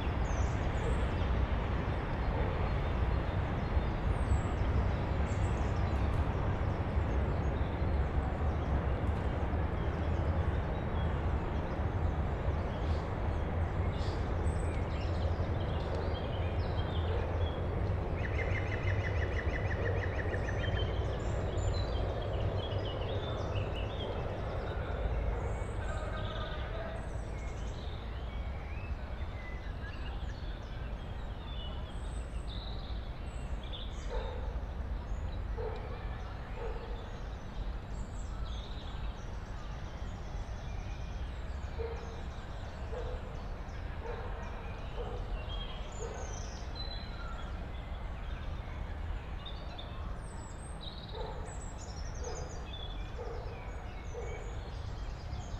the sound of freight trains is audible day and night. in spring and summer time, people hang out here for fun and recreation.
(SD702, Audio Technica BP4025)